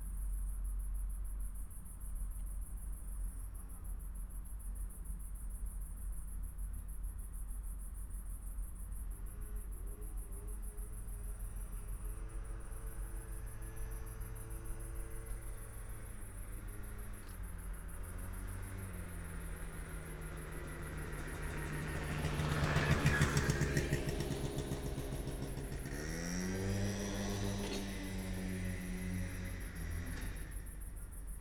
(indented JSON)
{
  "title": "Escher Str., Nippes, Köln - near train underpass, between the tracks, night ambience",
  "date": "2019-09-10 22:15:00",
  "description": "Köln Nippes, small road between the tracks, night ambience /w crickets, trains\n(Sony PCM D50, Primo EM172)",
  "latitude": "50.96",
  "longitude": "6.94",
  "altitude": "52",
  "timezone": "Europe/Berlin"
}